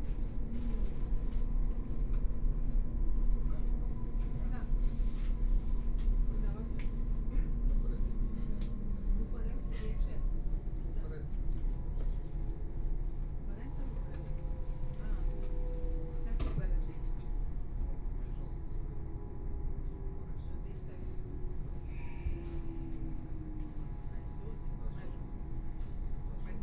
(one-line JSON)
{"title": "Hallbergmoos, Germany - S Bahn S8", "date": "2014-05-06 20:29:00", "description": "S- Bahn, Line S8, In the compartment", "latitude": "48.31", "longitude": "11.72", "altitude": "459", "timezone": "Europe/Berlin"}